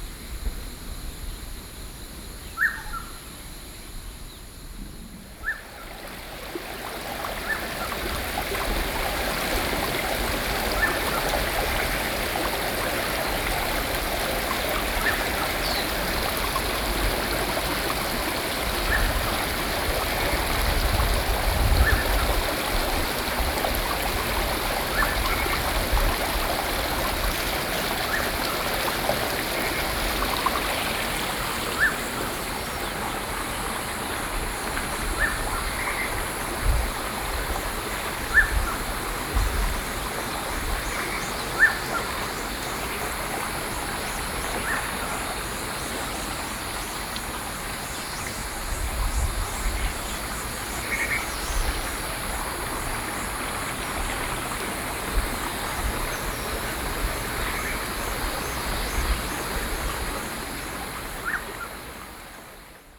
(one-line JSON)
{"title": "西勢溪, 清泉里 Jinshan District - Bird and Stream", "date": "2012-07-11 07:35:00", "description": "Bird and Stream\nZoom H4n+Rode NT4(soundmap 20120711-20 )", "latitude": "25.23", "longitude": "121.62", "altitude": "16", "timezone": "Asia/Taipei"}